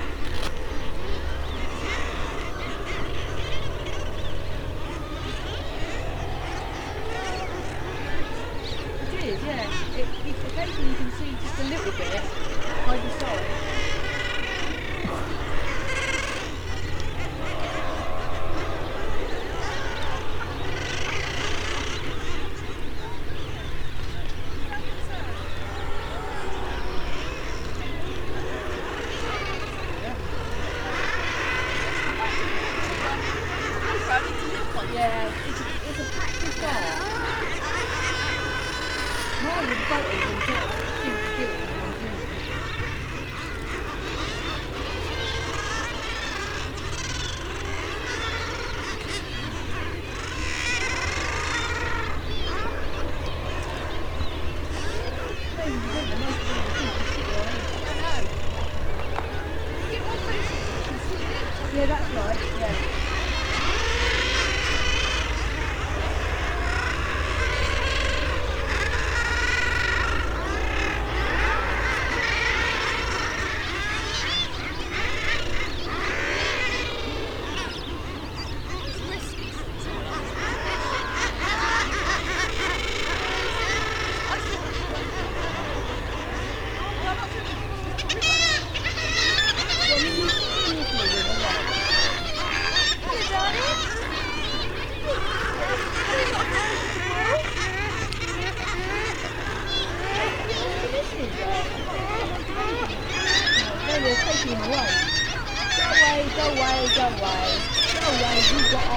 North Sunderland, UK - guillemot colony ...
Staple Island ... Farne Islands ... wall to wall nesting guillemots ... background noise from people ... boats ... cameras etc ... bird calls from kittiwakes ... young guillemots making piping calls ... warm sunny day ... parabolic ...
Seahouses, UK